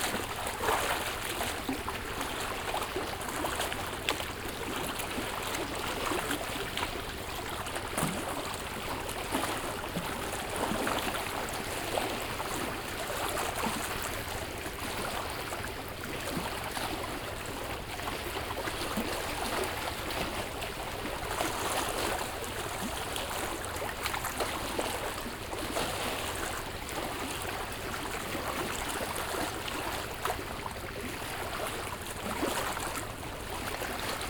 Kapenta fishing is big business at Kariba lake; for three weeks every months, the lake is filled with kapenta rigs fishing; in fact, from far away, a newcomer may think there's a big city out there in the dark; only one week over the full moon, there's quite; fishing is not permitted; each rig has at least two generators running, one to lift the net the other for movement of the rig...